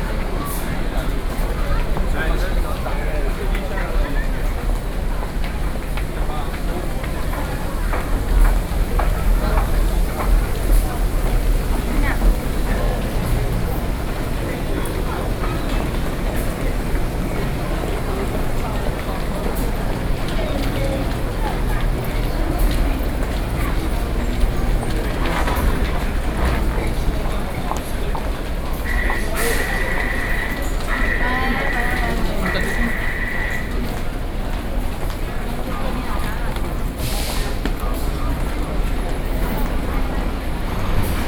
Taipei Main Station, Zhongzheng District, Taipei City - in the MRT Station
Taipei City, Taiwan, 4 November 2012